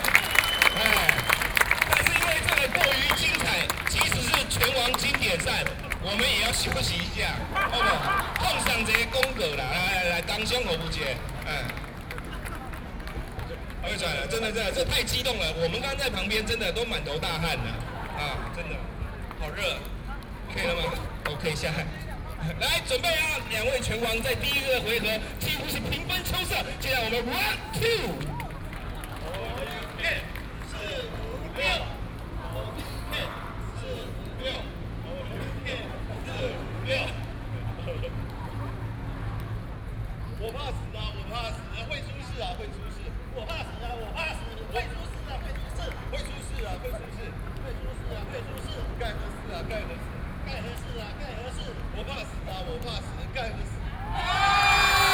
Taipei, Taiwan - Anti-nuclear activities
台北市 (Taipei City), 中華民國